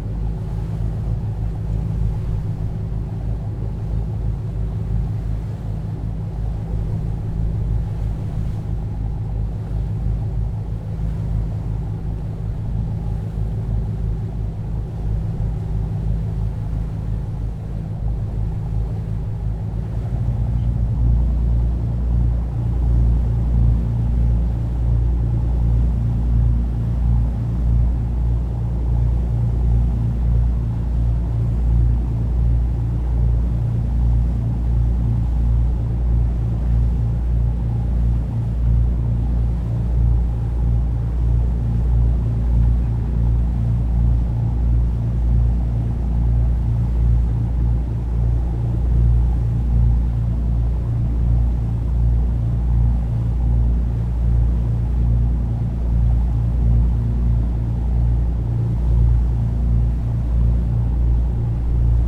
Crewe St, Seahouses, UK - Grey Seal Cruise ...
Grey Seal cruise ... entering Sea Houses harbour ... background noise ... lavalier mics clipped to baseball cap ...
6 November 2018, 13:20